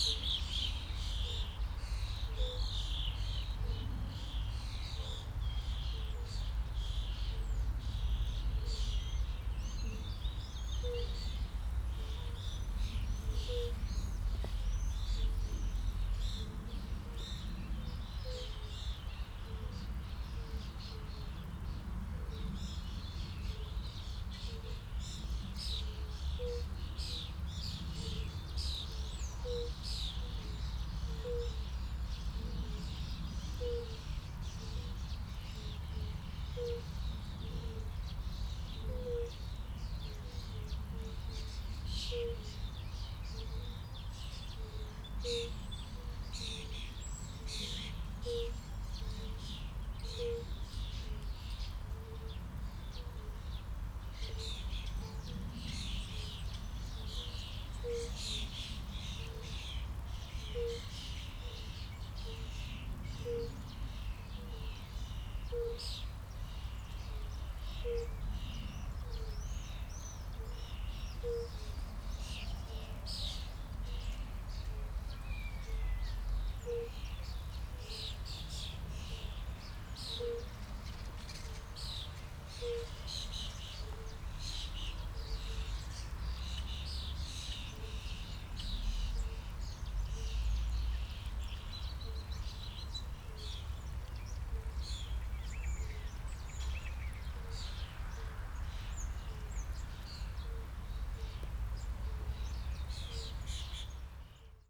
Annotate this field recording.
pond, nature reserve, calls of some Fire-bellied toads, distant traffic, aircraft, (Sony PCM D50, DPA4060)